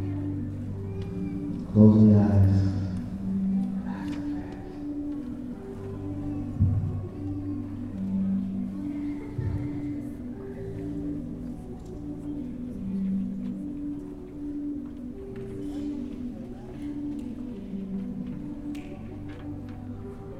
W 14th Ave Pkwy Denver, CO - DAM Yoga: North Building
The Denver Art Museum was hosting a yoga class in the large main room of the North Building